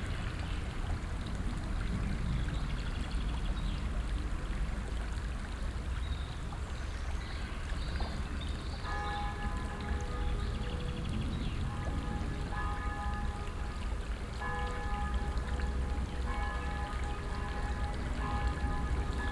overath, immekepplerteich, small bridge, bells - overath, immekepplerteich, small bridge, bells 02
früher abend, auf brücke über kleinem fluss, wasserplätschern, flugzeugüberflüge (anflugschneise flghf köln/ bonn), kirchglocken, strassenverkehr
soundmap nrw - social ambiences - sound in public spaces - in & outdoor nearfield recordings